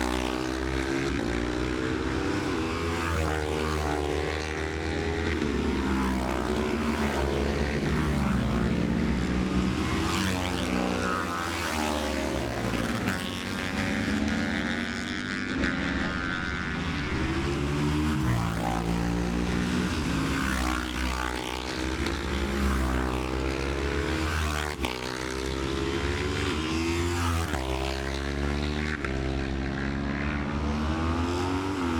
Jacksons Ln, Scarborough, UK - Gold Cup 2020 ...
Gold Cup 2020 ... Twins practice ... dpas sandwich box to MixPre3 ...
11 September, 09:32